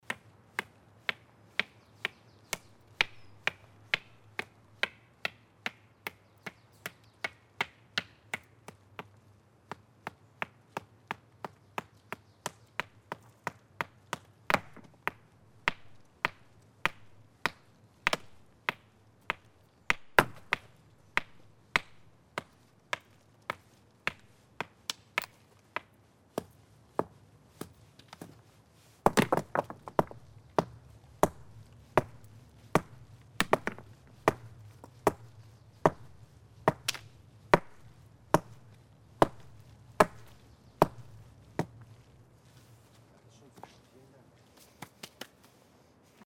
wülfrath, hammerstein, zeittunnel, eingang - wülfrath, hammerstein, zeittunnel, vor eingang
frühjahr 07 morgens - steinhaufen und bearbeitung von steinen am "zeittunel"
project: :resonanzen - neandereland soundmap nrw - sound in public spaces - in & outdoor nearfield recordings
June 2008